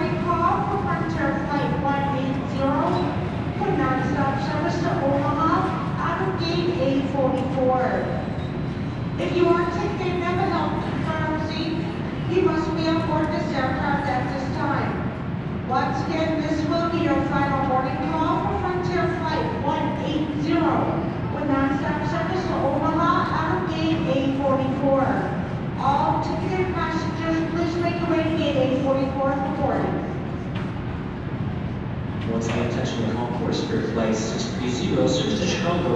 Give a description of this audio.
Recorded with a pair of DPA 4060s and a Marantz PMD661